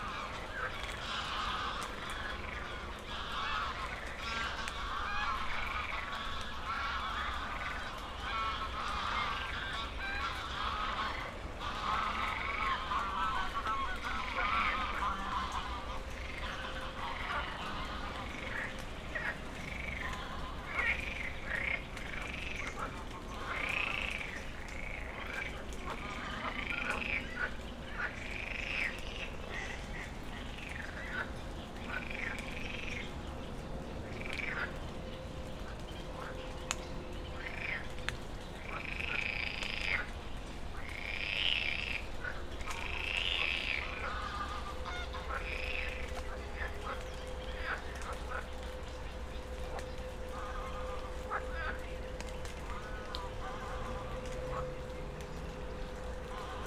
Moorlinse, Buch, Berlin - S-Bahn, frogs, geese
after the rain at Moorlinse pond, frogs and geese, a S-Bahn passing by, distant traffic noise from the Autobahn
(SD702, Audio Technica BP4025)